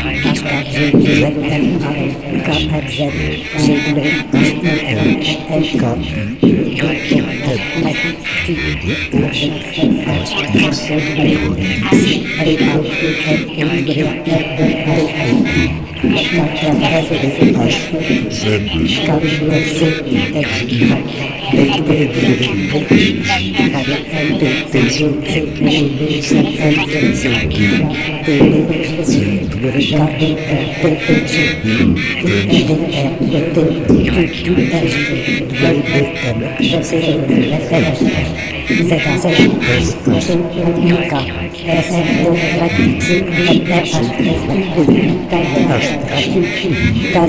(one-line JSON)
{"title": "La Friche - Forge - Echo - BMZGD", "date": "2012-05-27 18:14:00", "latitude": "49.28", "longitude": "4.02", "altitude": "83", "timezone": "Europe/Paris"}